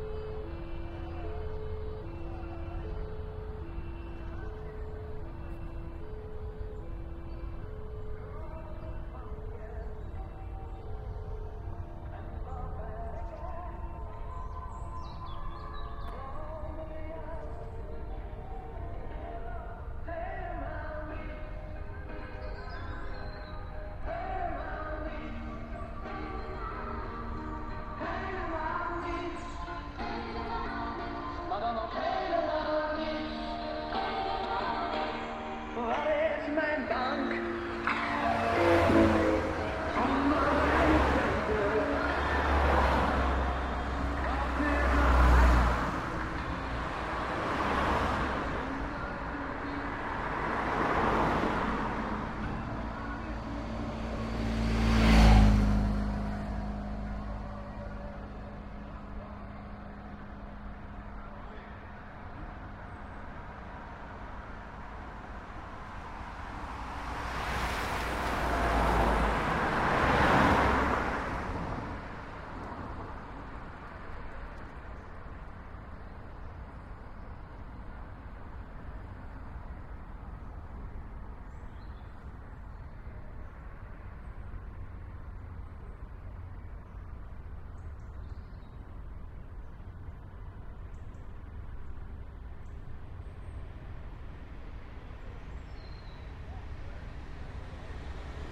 Kapellen, België - Sluitingsprijs Putte - Kapellen
the last cycling race of the year in Putte - Kapellen
waiting for "the peleton"
Kapellen, Belgium